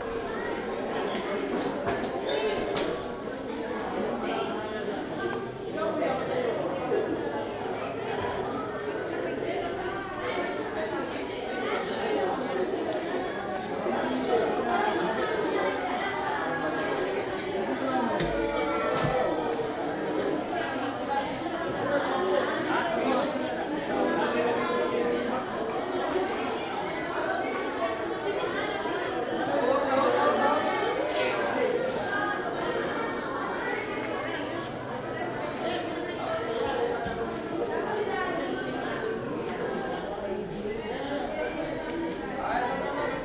Tourists gather on the roofed open panoramic view place at the upper platform of "Palace in the Sky", the unfinished mansion from the Marcos period in the eighties, now a tourist attraction with widespread views from the top of the inactive stratovulcano Mount Sungay (or Mount Gonzales). Someone from the Tagaytay Picnic Grove is showing a big white snake. WLD 2016
Tagaytay, Cavite, Philippines